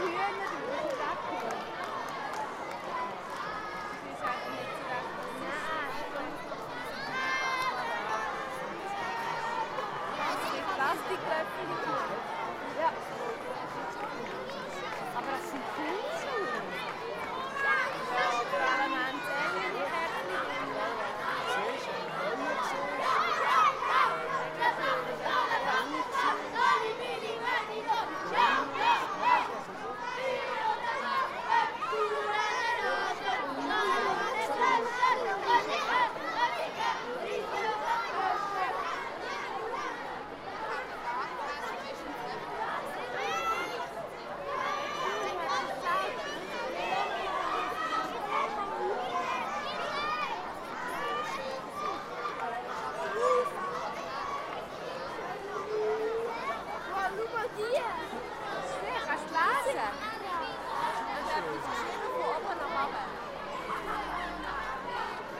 Bachfischet, Aarau, Schweiz - Bachfischetzug
Once in a year, the students of Aarau walk with lanterns through the city. The event is said to come from medival ages, when the brooks of the city were cleaned once a year. The students produce the lanterns themselves, thus every year it is also a parade of new designs. You hear the drums in front of the parade, then the whole parade, the recording is made within the audience, who comments on the lanterns ('pinguine!', 'das Aarauer Stadtwappen), as well as the singing students, who always sing the same song: «Fürio de Bach brönnt, d Suhrer händ /ne aazöndt, d Aarauer händ ne glösche, / d Chüttiger, d Chüttiger riite uf de Frösche!».